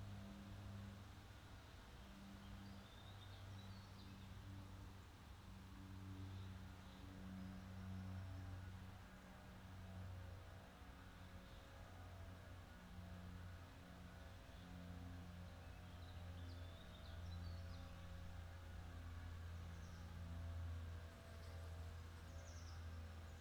Park Sorghvliet, Den Haag, Nederland - Park Sorghvliet (1/2)
Binaural recording in Park Sorghvliet, The Hague. A park with a wall around it. But city sounds still come trough.
26 June 2014, 15:00